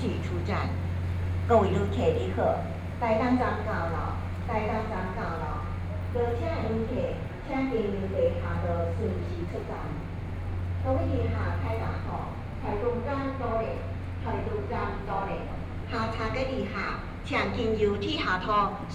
broadcasting sound in the station, Dialogue between tourists, From the platform via underpass, Go to the exit of the station, Binaural recordings, Zoom H4n+ Soundman OKM II
15 January, ~14:00